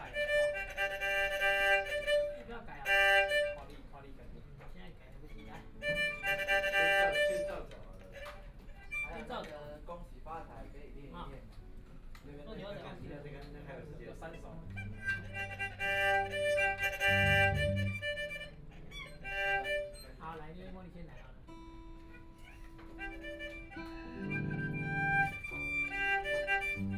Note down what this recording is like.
A visually impaired person to play with the orchestra is practicing sound of conversation, Binaural recording, Zoom H6+ Soundman OKM II